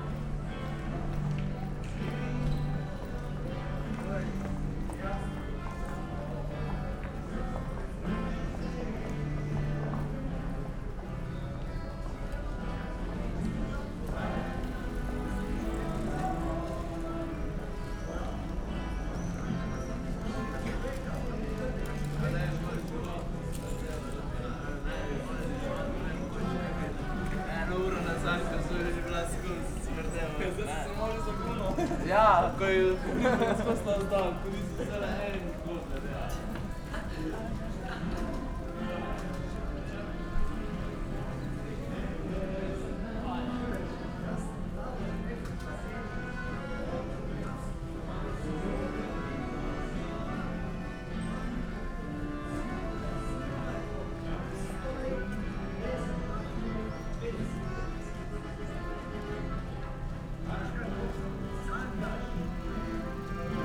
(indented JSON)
{
  "title": "Maribor, Gosporska ulica - musicians competing",
  "date": "2012-05-30 10:45:00",
  "description": "two musicians around the corner occupy the place with their songs, creating an strange mix\n(SD702 DPA4060)",
  "latitude": "46.56",
  "longitude": "15.65",
  "altitude": "274",
  "timezone": "Europe/Ljubljana"
}